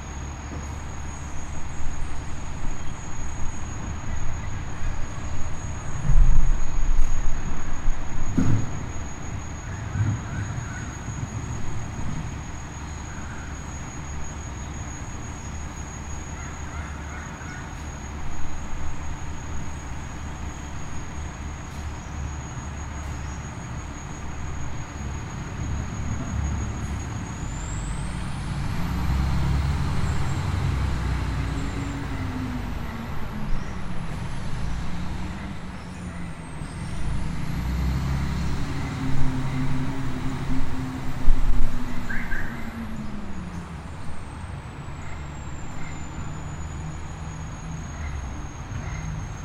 Parque La Castellana, Av., Medellín, Antioquia, Colombia - Ambiente Parque la Castellana
Ambiente grabado en rodaje de cortometraje Aviones de Papel. Locación: parque la castellana.
Sonido tónico: vehículos transitando, aves cantando.
Señal sonora: voces.
Equipo: Luis Miguel Cartagena Blandón, María Alejandra Flórez Espinosa, Maria Alejandra Giraldo Pareja, Santiago Madera Villegas, Mariantonia Mejía Restrepo.
Valle de Aburrá, Antioquia, Colombia, 3 October, 09:05